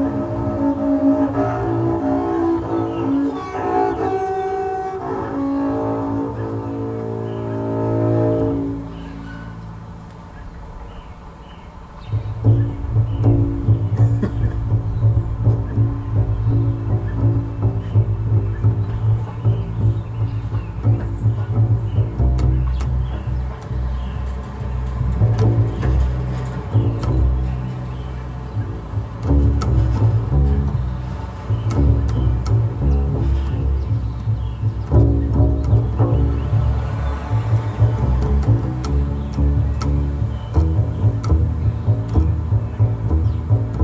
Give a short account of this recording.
Brought speakers to a park with sounds of previous days playing through them. Ian playing bass on top. Recorded all together